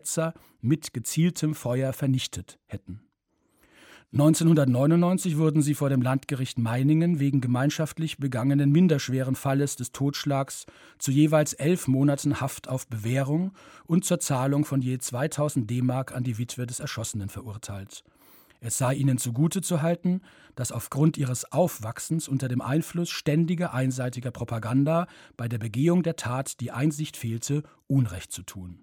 zwischen kalte kueche und tettau - am weg
Produktion: Deutschlandradio Kultur/Norddeutscher Rundfunk 2009
18 August 2009, ~17:00